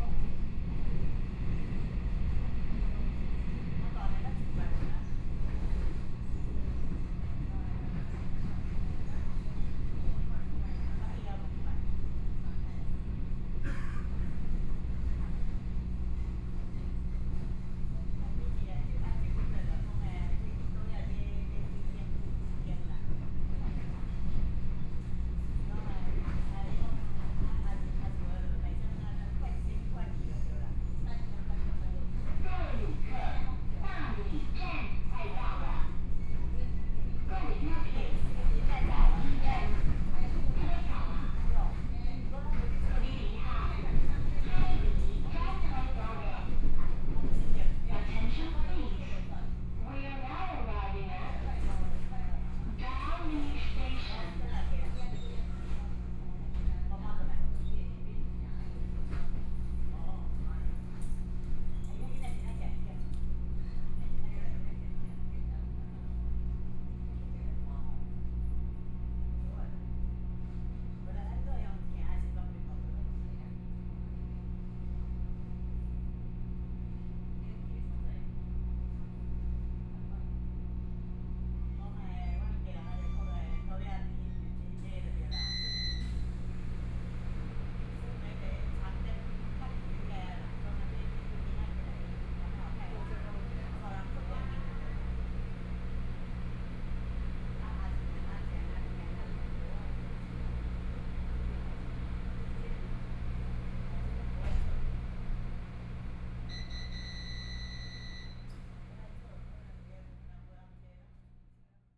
Toucheng Township, Yilan County - Local Train
from Daxi Station to Dali Station, Binaural recordings, Zoom H4n+ Soundman OKM II